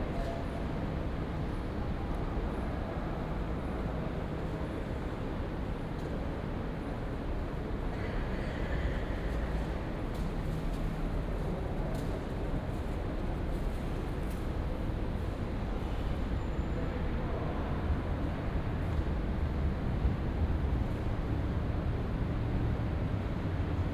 Kálvin tér, Budapest, Hungary - (149) Kálvin tér metro station
Binaural recording of Kálvin tér metro station
recorded with Soundman OKM + Sony D100
sound posted by Katarzyna Trzeciak
Közép-Magyarország, Magyarország, 11 June, ~14:00